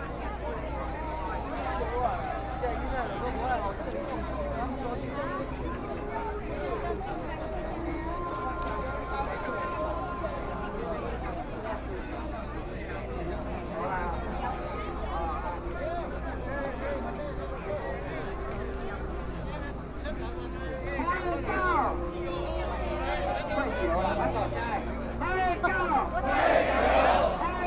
East Gate of Taipei City - Anger
Protesters, Shouting slogans, Binaural recordings, Sony Pcm d50+ Soundman OKM II